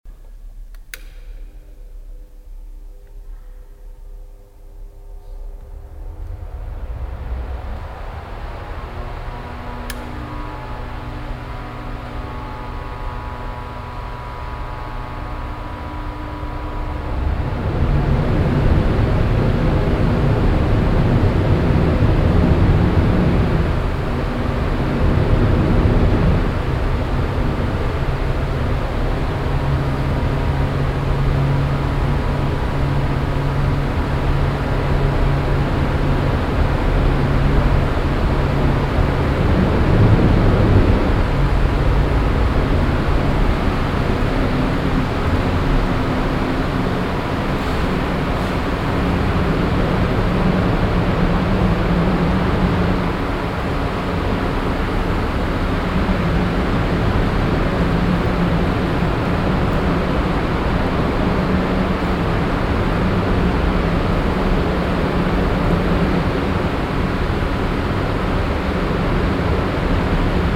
cologne, melchiorstrasse, alte feuerwache, ausstellungshalle, lüftungsventilatoren
einschalten, an- und hochlaufen und abschalten der lüftungsventilatoren in der ausstellungshalle
soundmap nrw:
topographic field recordings, social ambiences
2 August, 00:28